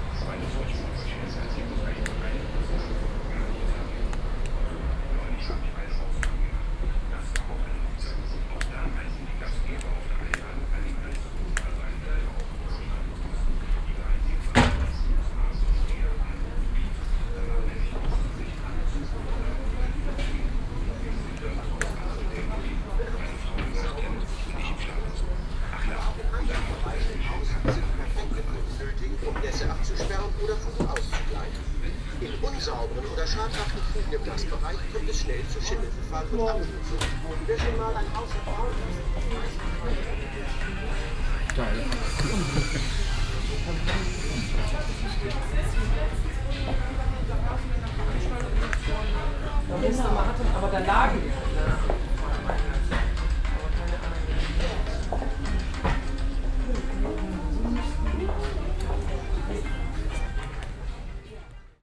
atmosphäre im baumarkt, morgens
soundmap nrw: social ambiences, art places and topographic field recordings